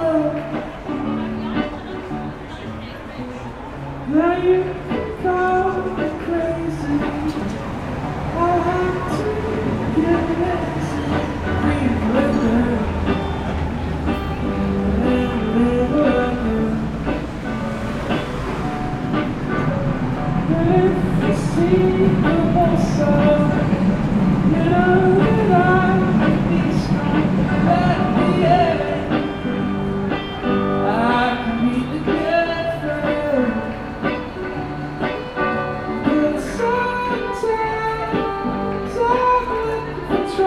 U Eberswalder Str. (Berlin), Berlin, Deutschland - UEberswalderStrasse20220408
8 April 2022